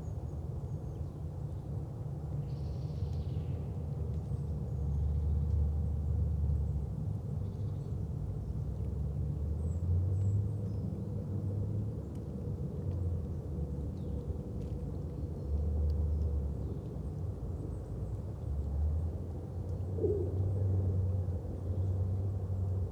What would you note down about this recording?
Saturday early afternoon at the pond, distant sounds of work and maybe traffic, (Sony PCM D50, Primo EM172)